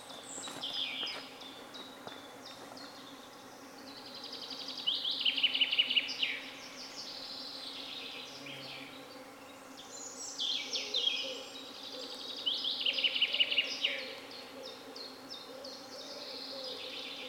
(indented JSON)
{
  "title": "Alaušai, Lithuania, forest soundscape",
  "date": "2022-06-13 15:40:00",
  "description": "grand mosquitos chorus on the second plane...",
  "latitude": "55.63",
  "longitude": "25.72",
  "altitude": "160",
  "timezone": "Europe/Vilnius"
}